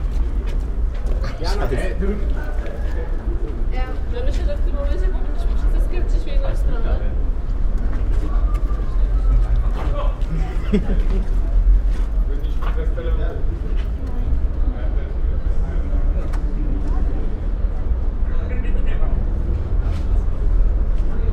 berlin, holocaust monument - unusual acoustics
The Memorial to the Murdered Jews of Europe in Berlin is in many ways an interesting site, with an unusual acoustics when you go inside.